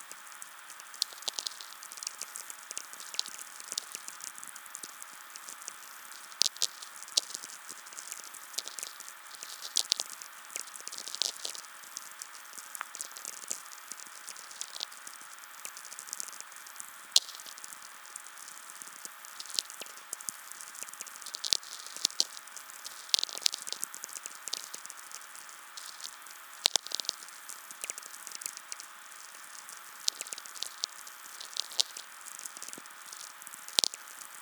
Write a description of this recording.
Evening natural atmospheric radio (VLF) lstening.